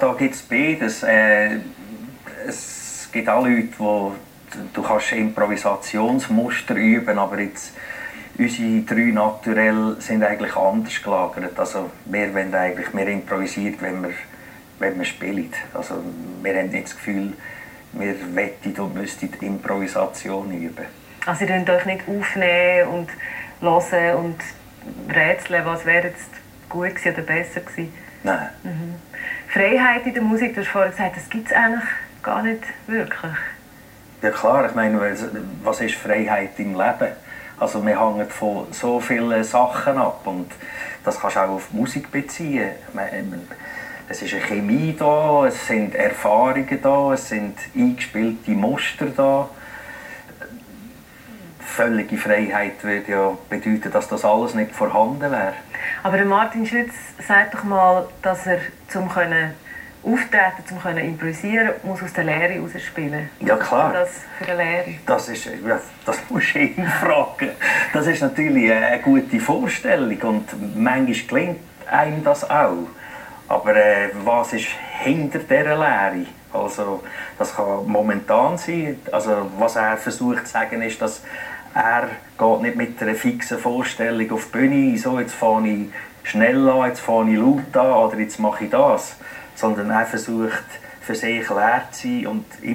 tv music magazine "klanghotel" about Koch/Schütz/Studer, interview with drummer Fredy Studer. Recorded in the hotel room, june 16, 2008. - project: "hasenbrot - a private sound diary"